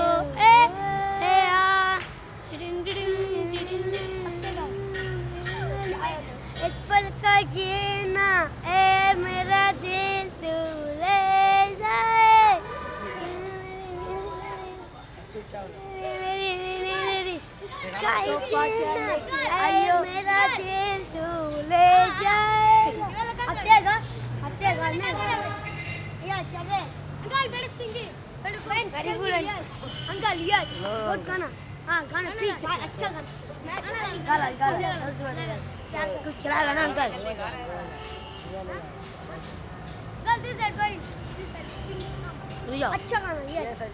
Park St, Park Street area, Kolkata, West Bengal, Inde - Park Street Calcutta
Calcutta - Park Street
Instantané sonore de la rue.
West Bengal, India